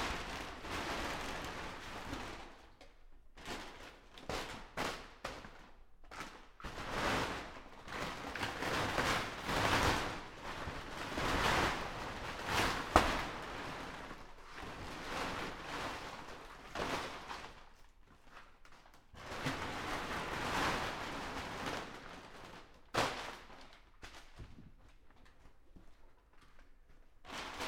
Krügerstraße, Mannheim, Deutschland - Clean-up work in a storage building
A person taking down a large (approx. 5 x 3 x 3 meter) bubble tent that was used for storing items in a nitrogen atmosphere. The bubble material (aluminum compound material) is cut to pieces, folded up and placed on a pallet truck with which it is pulled away later on. Floor protection from PVC Material is rolled up. Some parts made of wooden bars are dismantled. The space is cleaned with a broom, the waste taken out and the area is locked. Binaural recording. Recorded with a Sound Devices 702 field recorder and a modified Crown - SASS setup incorporating two Sennheiser mkh 20 microphones.